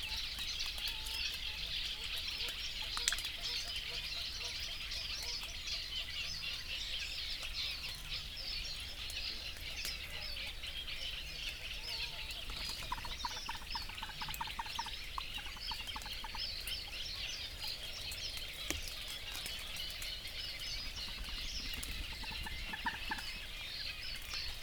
Meare, UK - bitterns ... and rain drops ...
Bitterns and rain drops ... bitterns booming ... the rain has stopped though droplets still fall from the trees ... bird calls and song from ... bitterns ... reed warblers ... reed bunting ... little grebe ... crow ... coot ... water rail ... gadwall ... cuckoo ... wood pigeon ... Canada geese ... to name a few ... open lavalier mics clipped to a T bar fastened to a fishing bank stick ... one blip in the mix ... and background noise ...